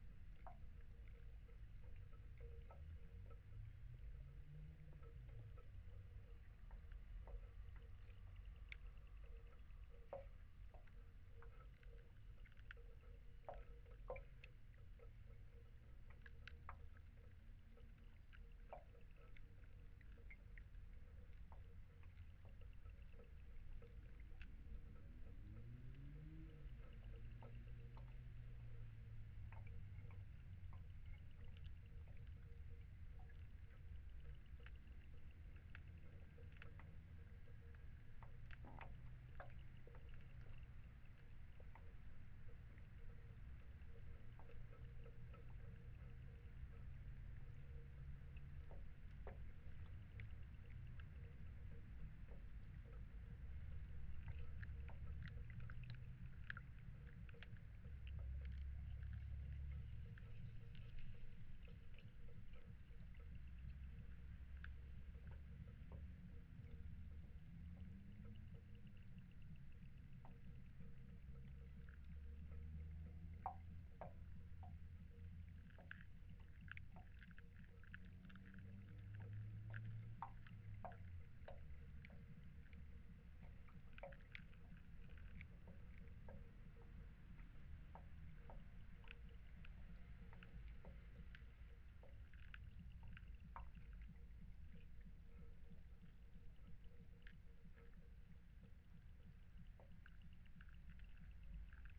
Segbroeklaan, Den Haag - hydrophone rec at a little dock, next to the bridge
Mic/Recorder: Aquarian H2A / Fostex FR-2LE